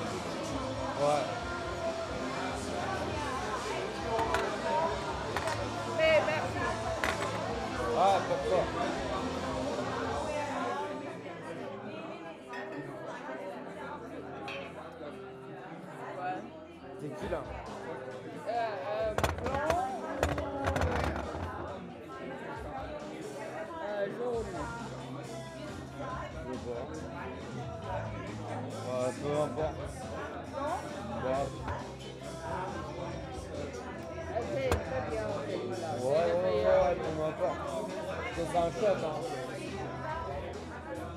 berlin, manteuffelstraße: club - the city, the country & me: confusion of tongues?
french tourist ordering tequila
the city, the country & me: july 3, 2016